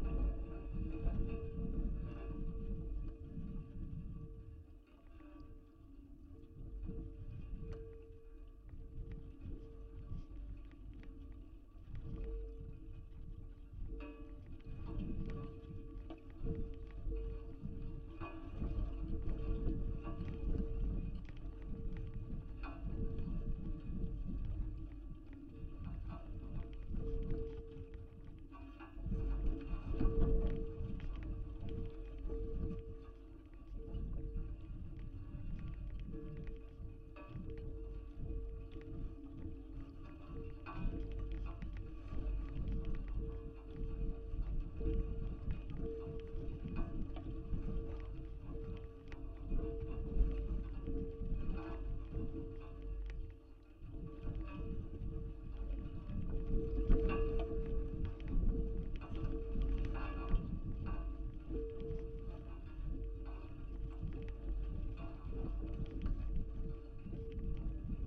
Portesham, Dorset, UK - water trough
contact mic recording of a water trough on the South Dorset Ridgeway. SDRLP project supported by HLF and Dorset AONB.